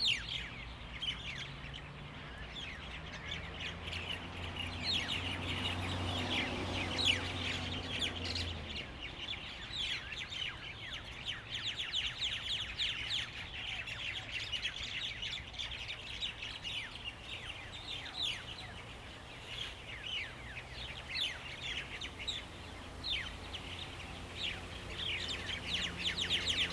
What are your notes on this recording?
Birds singing, Traffic Sound, Zoom H2n MS +XY